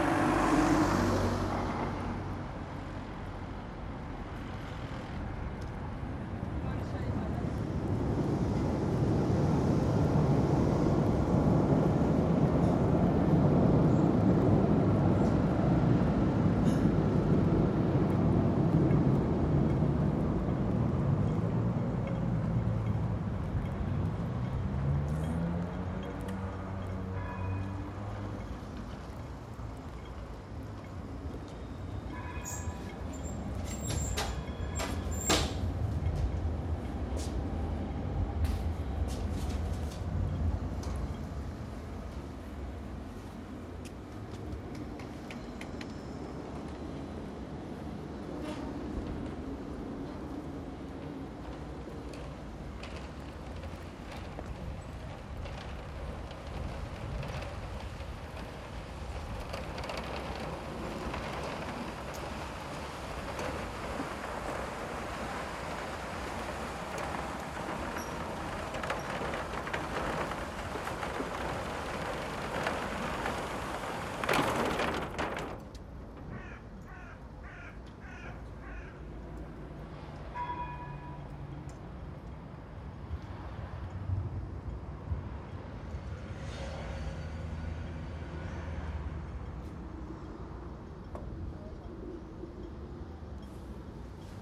Rue Verheyden, Gare de l'Ouest, Molenbeek-Saint-Jean, Bruxelles - Weststation/Rue Verheyden-Ambiance
Multiple layers of the soundscape of Rue Verheyden near Weststation. The regular pulse of the train in the distance, traffic, birds, people walking, talking, wind and trees.
Anderlecht, Belgium, 15 October 2016